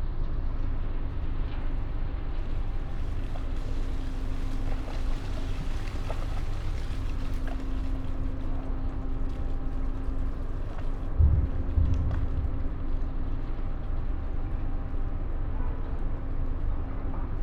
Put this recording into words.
place revisited on a winter Friday evening. No sounds from coal freighters shunting, but the busy concrete factory, (SD702, MHK8020 AB)